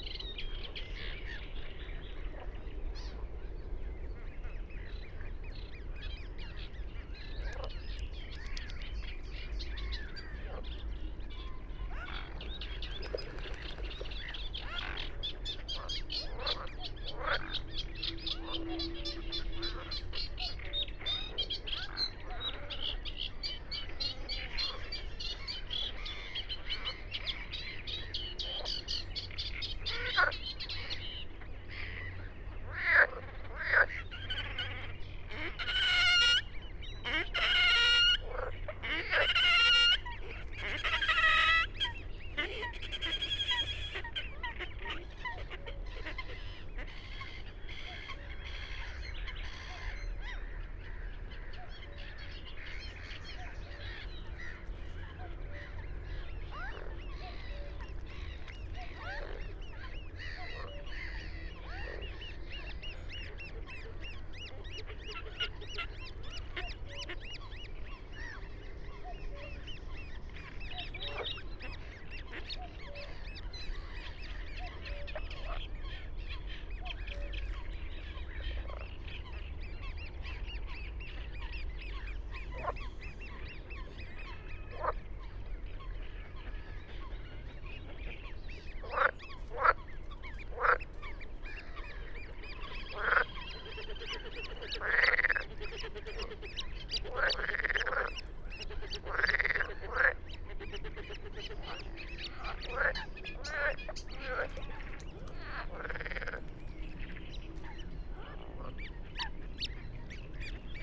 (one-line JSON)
{"title": "Moorlinse, Kleine Wiltbergstraße, Berlin Buch - evening chorus of water birds and frogs", "date": "2022-05-08 21:00:00", "description": "frogs and birds at Moorlinse pond, Berlin Buch, remarkable call of a Red-necked grebe (Podiceps grisegena, Rothalstaucher) at 3:45, furtherEurasian reed warbler (Acrocephalus scirpaceus Teichrohrsänger) and Great reed warbler(Acrocephalus arundinaceus, Drosselrohrsänger), among others\nWhat sounds like fading is me moving the Telinga dish left and right here and there.\n(SD702, Telinga Pro8MK2)", "latitude": "52.63", "longitude": "13.49", "altitude": "53", "timezone": "Europe/Berlin"}